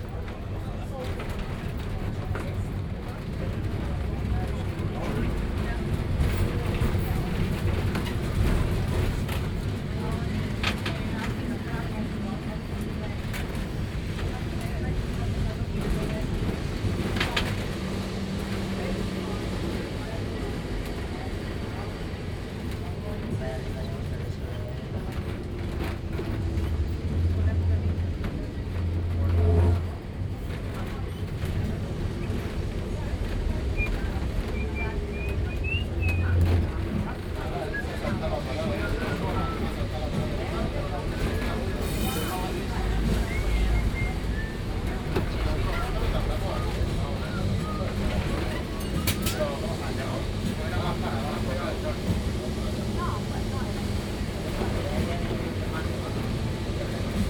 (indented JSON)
{
  "title": "Lisbon, Electrico - sound drive",
  "date": "2010-07-03 12:00:00",
  "description": "ambience in tram electrico nr.28 while driving through the city. binaural, use headphones",
  "latitude": "38.71",
  "longitude": "-9.13",
  "altitude": "69",
  "timezone": "Europe/Lisbon"
}